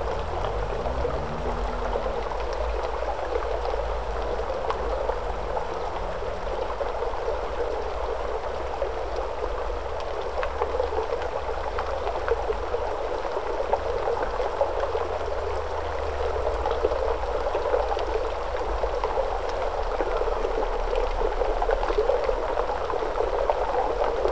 Early spring mountain water rolling small rocks around Nerja, Spain; recorded under bridge, about one minute in vehicle loaded with heavy bass beat passes over...
Málaga, Andalucía, España